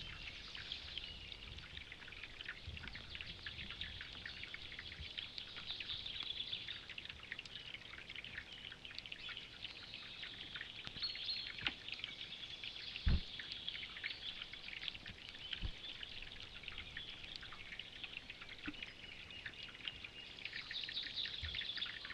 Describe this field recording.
two hydrophones placed on a tiny ice